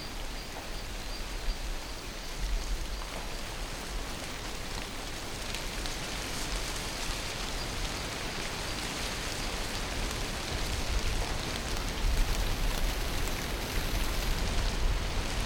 ambiance enregistrée sur le tournage de bal poussiere dhenri duparc